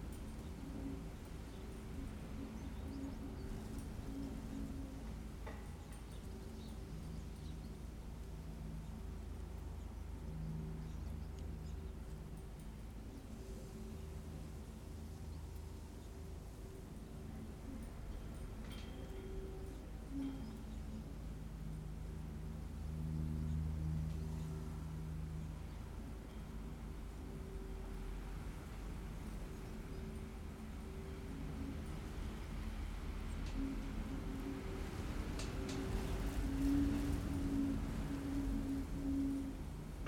Troon, Camborne, Cornwall, UK - The Wind and the Gate
Windy dry day. A recording of the wind in hedgerows and passing through a gate. DPA4060 microphones, Sound Devices Mixpre-D and Tascam DR100.
15 July 2015